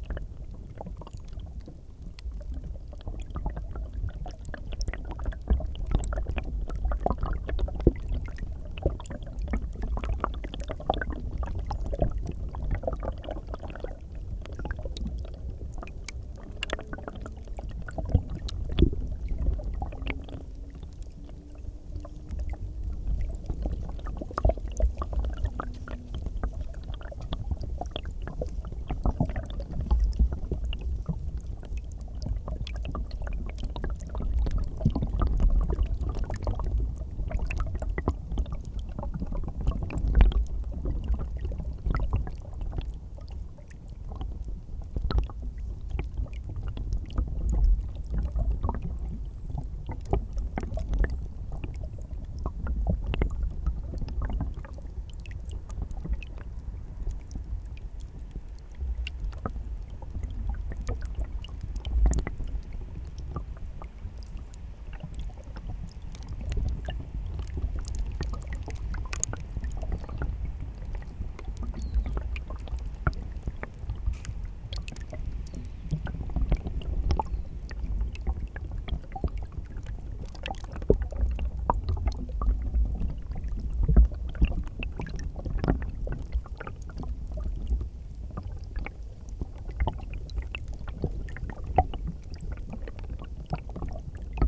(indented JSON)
{
  "title": "Utena, Lithuania, ice study",
  "date": "2021-02-19 16:10:00",
  "description": "Tiny ice on a small river. Multichannel recording: omni, geophone, contact mics.",
  "latitude": "55.52",
  "longitude": "25.59",
  "altitude": "100",
  "timezone": "Europe/Vilnius"
}